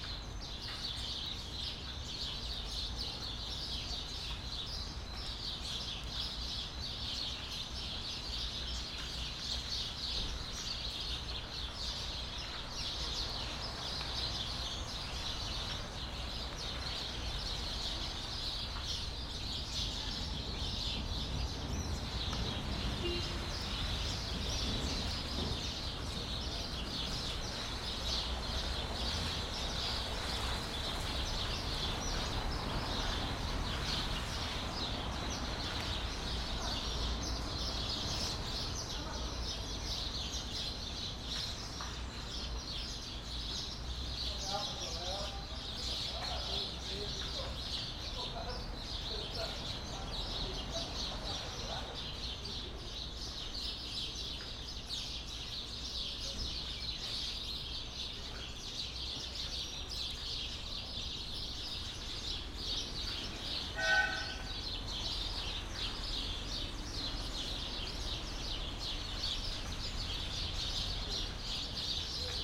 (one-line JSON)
{"title": "São Félix, Bahia, Brazil - Pássaros no café", "date": "2014-03-15 05:21:00", "description": "Praça do terminal rodoviária de São Félix com os primeiros sons do dia. Saí de casa de pijama pra realizar esta atividade.\nGravado com o gravador Tascam D40\npor Ulisses Arthur\nAtividade da disciplina de Sonorização, ministrada pela professora Marina Mapurunga, do curso de cinema e audiovisual da Universidade Federal do Recôncavo da Bahia (UFRB).", "latitude": "-12.61", "longitude": "-38.97", "altitude": "9", "timezone": "America/Bahia"}